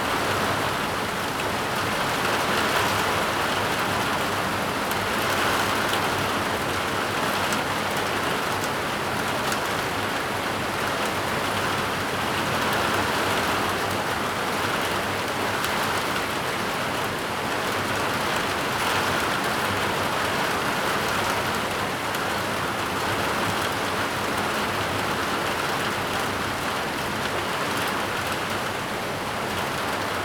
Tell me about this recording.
Heavy rain, Thunderstorms, Zoom H2n MS +XY